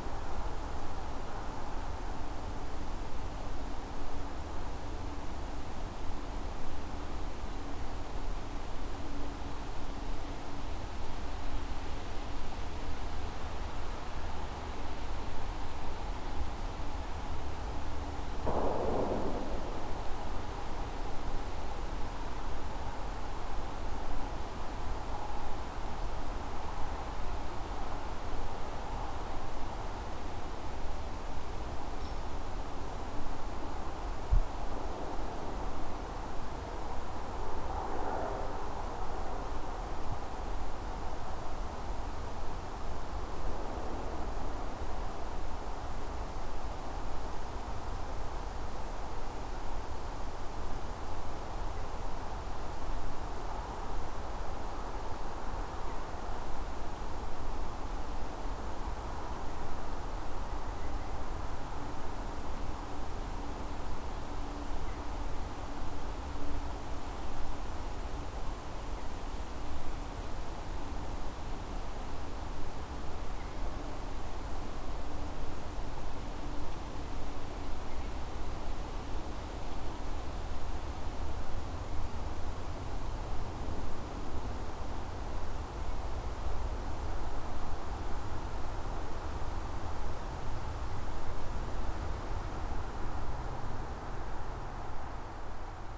Scherbel Rd, Black Earth, WI, USA - Overlooking a Meadow
Captured along nature trail overlooking a meadow. Gunshot at 2:26. Recorded with a Tascam DR-40 Lenear PCM Recorder.
5 January 2019